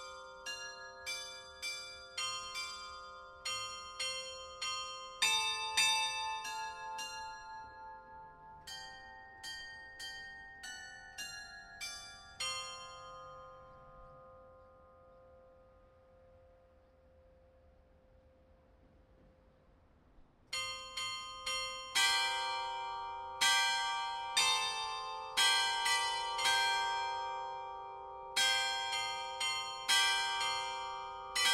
Pl. Léon Blum, Desvres, France - Carillon de Desvres
Desvres (Pas-de-Calais)
Carillon sur la place du Bourg.
Ritournelles automatisées programmées depuis la mairie.
France métropolitaine, France, 2 July 2020